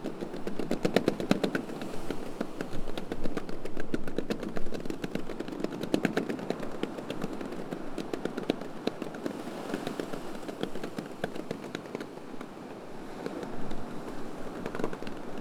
cloth screen flapping in the strong wind (sony d50)